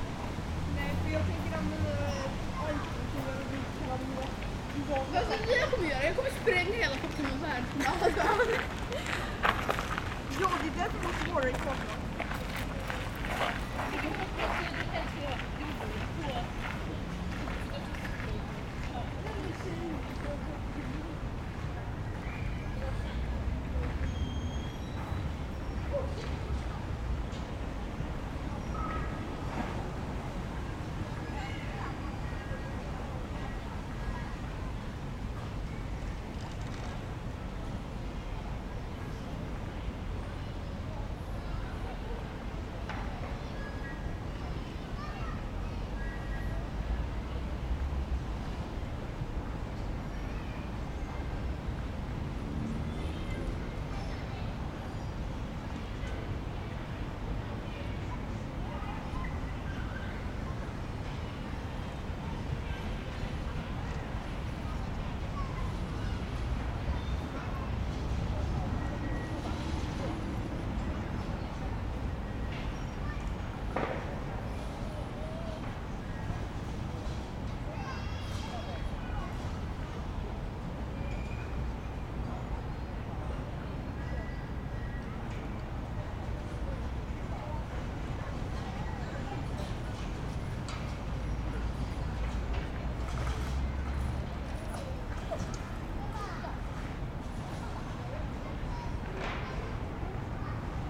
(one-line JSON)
{"title": "Östermalm, Stockholm, Suecia - environment park", "date": "2016-08-01 23:17:00", "description": "Ambient sonor tranquil al parc.\nAmbient sound quiet park.\nAmbiente sonoro tranquilo en el parque.", "latitude": "59.34", "longitude": "18.07", "altitude": "23", "timezone": "Europe/Stockholm"}